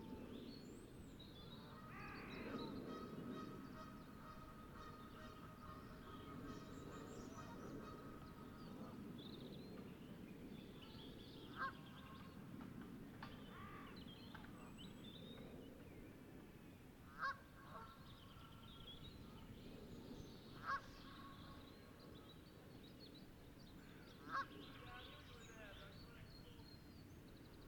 Hope Valley, UK - Ladybower-Geese
On a wooded headland at the northern end of the reservoir. Sunny spring day.
England, United Kingdom